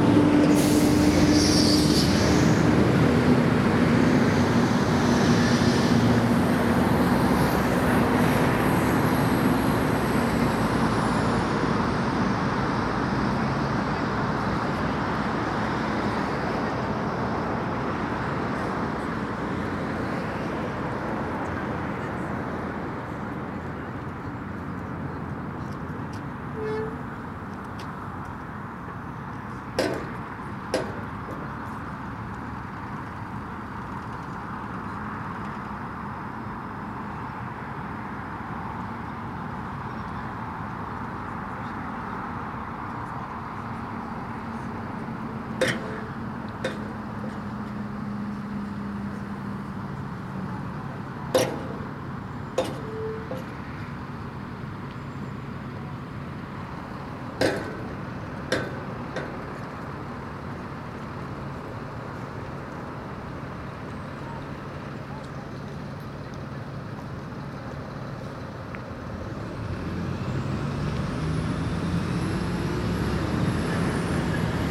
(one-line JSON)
{"title": "Contención Island Day 57 inner south - Walking to the sounds of Contención Island Day 57 Tuesday March 2nd", "date": "2021-03-02 10:44:00", "description": "The Drive High Street Great North Road\nA cold mist in still air\nPulse of traffic\nclang of gate\nwalkers runners dogs", "latitude": "54.99", "longitude": "-1.62", "altitude": "64", "timezone": "Europe/London"}